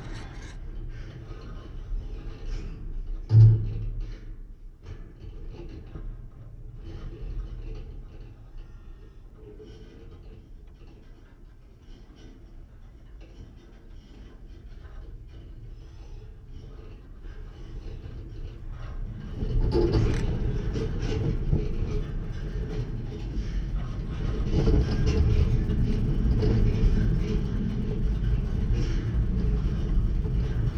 Singing/rumbling wire at the exSoviet military base, Vogelsang, Zehdenick, Germany - Wind in abandoned fencing-wire as heard by contactmics
This random pile of fencing wire abandoned decades ago is still silvery and un-rusted, but grass and other plants grow through it. In wind it moves as complex interlinked system creating percussive hums from low bass to higher pitches that reverberate inside. Unhearable to the ear, but audible to contact mics.
The contact mics are the simplest self made piezos, but using TritonAudio BigAmp Piezo pre-amplifiers, which are very effective. They reveal bass frequencies that previously I had no idea were there.
25 August 2021, ~16:00